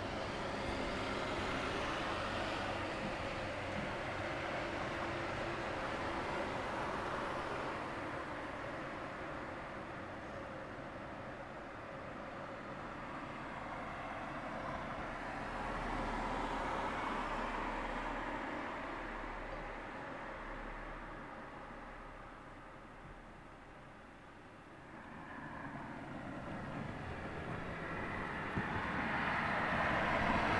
{"title": "Torvegade, København, Danimarca - Traffic cars", "date": "2022-02-03 19:06:00", "latitude": "55.67", "longitude": "12.59", "altitude": "5", "timezone": "Europe/Copenhagen"}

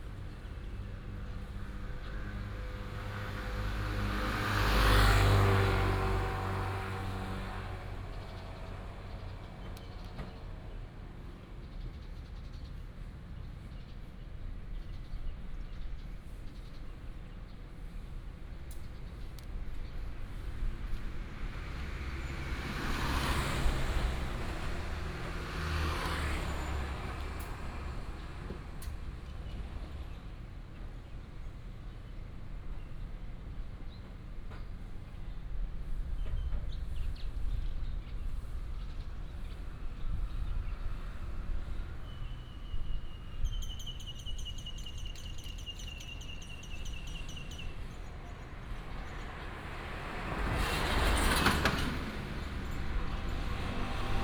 {"title": "彌陀公園, Kaohsiung City - Next to the park", "date": "2018-05-07 14:52:00", "description": "Next to the park, Traffic sound, Bird sound\nBinaural recordings, Sony PCM D100+ Soundman OKM II", "latitude": "22.79", "longitude": "120.25", "altitude": "7", "timezone": "Asia/Taipei"}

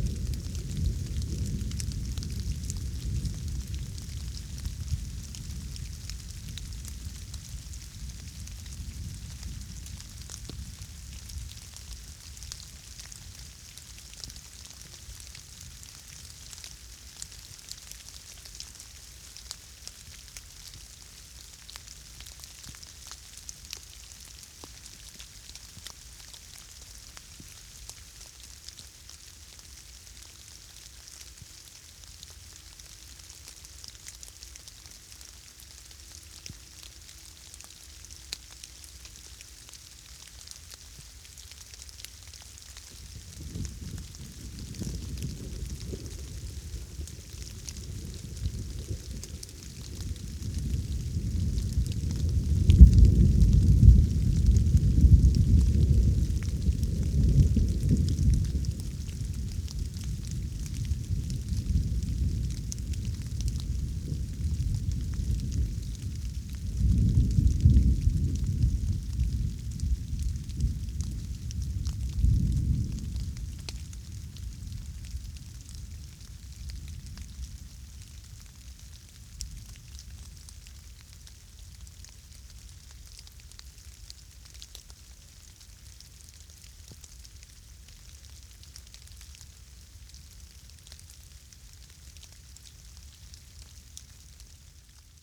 {"title": "Breitbrunn, Deutschland - Thunderstorm with light rain", "date": "2022-08-10 20:00:00", "description": "The highlands here in the Nature Park are an area for extreme weather conditions. There has been no rain here for weeks!! The water levels in the entire area are at their lowest water level in years! Forest fires have been raging for weeks and making the situation worse. All we can do is hope for a rainy autumn and a snowy winter. The climate crisis is hitting this area with full force for the sixth year in a row.\nLocation: Nature Park Germany\nAugust 2022\nSetup:\nEarSight omni mic's stereo pair from Immersive Soundscapes\nRode Blimp\nAudio-Technika ATH-M50x headphone\nAbleton 11 suite\nFilmora 10\nIphone 8plus", "latitude": "50.01", "longitude": "10.72", "altitude": "333", "timezone": "Europe/Berlin"}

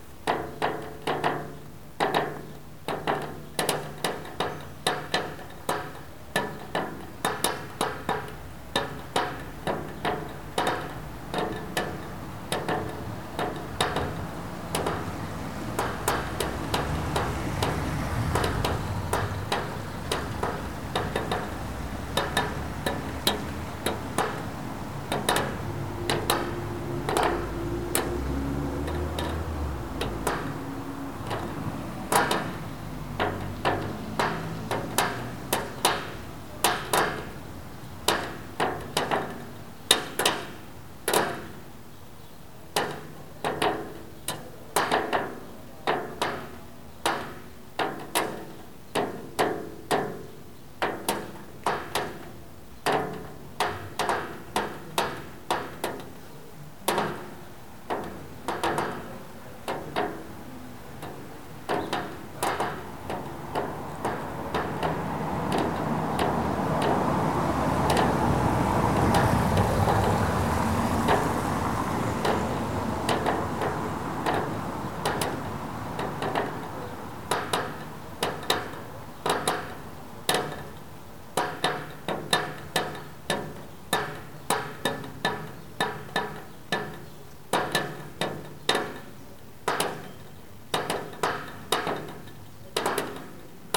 {"title": "Rue Sainte-Ursule, Toulouse, France - after the rain", "date": "2021-05-17 15:10:00", "description": "after the rain, a drop of water falling on a metal plate\ntraffic background\ncaptation : Zoom h4n", "latitude": "43.60", "longitude": "1.44", "altitude": "157", "timezone": "Europe/Paris"}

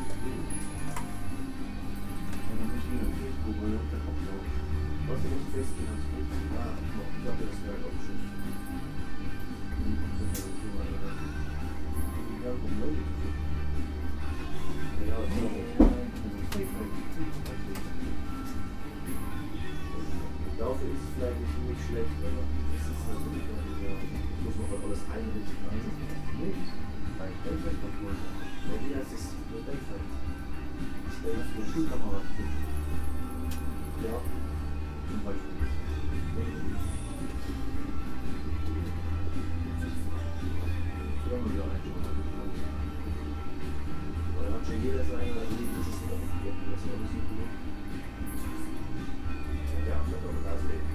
{"title": "Helgoland, Deutschland - felsenkrug", "date": "2014-02-08 23:10:00", "description": "felsenkrug, bremer str. 235, 27498 helgoland", "latitude": "54.18", "longitude": "7.89", "altitude": "14", "timezone": "Europe/Berlin"}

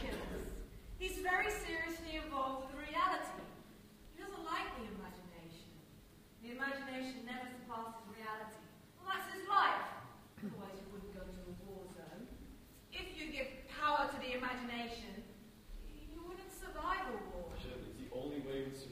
{"title": "essen, zeche zollverein, pact - essen, zeche zollverein, pact, performance, jan lauwers & needcompany - the deer house", "date": "2009-05-11 09:37:00", "description": "audio excerpt of a performance of the Jan Lauwers & Needcompany piece - the deer house at pact zollverein\nsoundmap nrw: social ambiences/ listen to the people - in & outdoor nearfield recordings", "latitude": "51.49", "longitude": "7.05", "altitude": "51", "timezone": "GMT+1"}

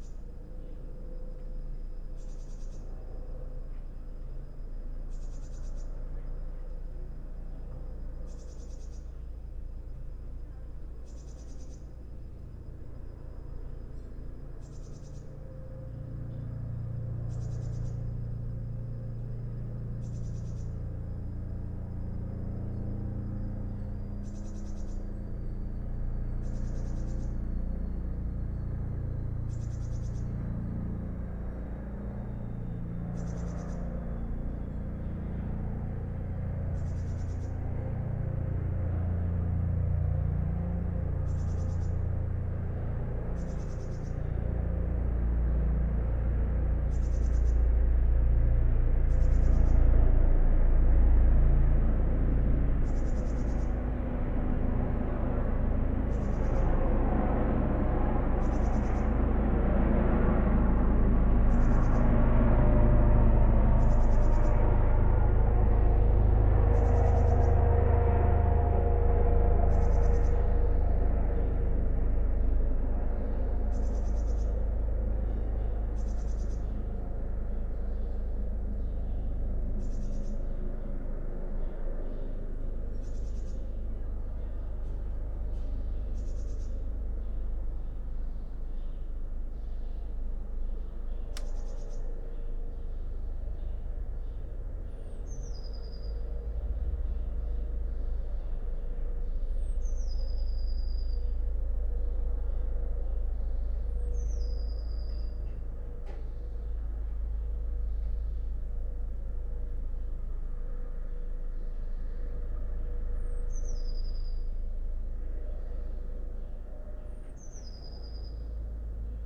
{"title": "Berlin Bürknerstr., backyard window - spring day, tits and helicopter", "date": "2020-04-04 15:05:00", "description": "warm day in early spring, tits in my backyard, a helicopter most probably observing social distancing behaviour in corona/covid-19 times\n(Sony PCM D50, Primo EM172)", "latitude": "52.49", "longitude": "13.42", "altitude": "45", "timezone": "Europe/Berlin"}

